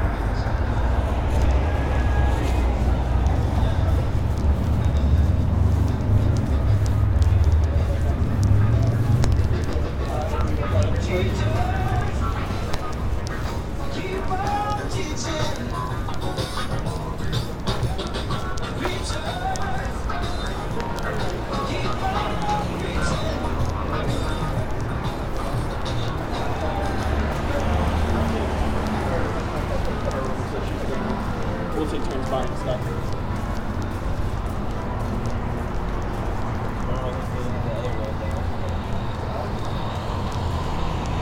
Washington Street, Binghamton, NY - Downtown Binghamton
Late Night Stroll in Downtown Binghamton.
Binghamton, NY, USA, 7 November 2018